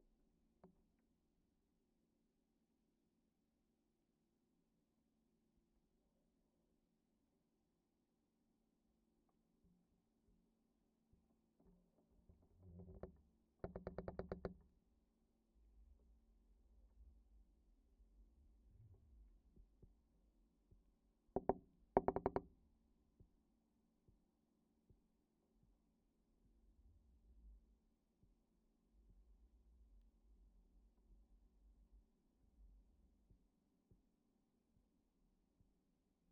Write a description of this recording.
contact microphones on the lock of abandoned hangar...